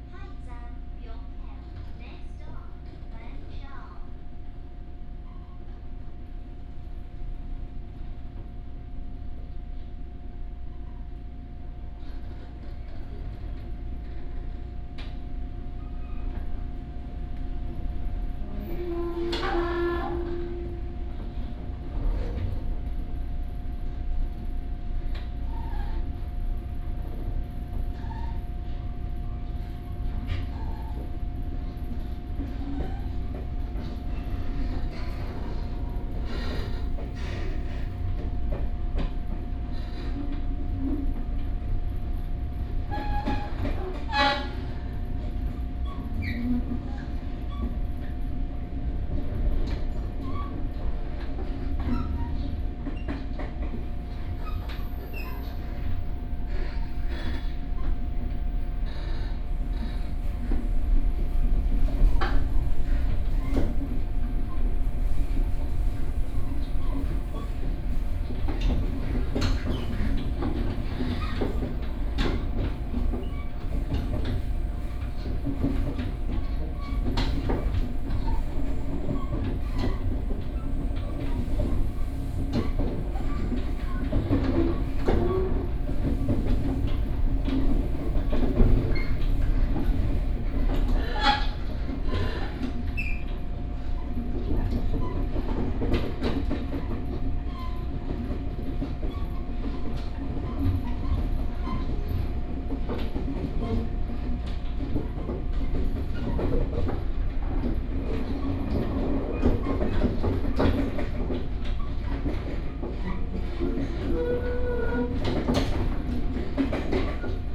Yingge Dist., New Taipei City - the train
In the train compartment, The passage between the carriage and the carriage, Binaural recordings, Sony PCM D100+ Soundman OKM II